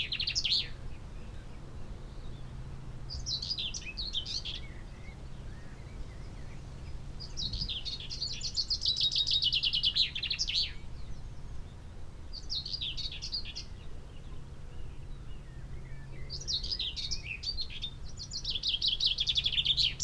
five singers in a tree ... xlr sass on tripod to zoom h5 ... bird song from ... chaffinch ... whitethroat ... chiffchaff ... yellowhammer ... blackbird ... plus song and calls from ... wren ... skylark ... wood pigeon ... crow ... linnet ... jackdaw ... linnet ... pheasant ... quite blustery ... background noise ...
Yorkshire and the Humber, England, United Kingdom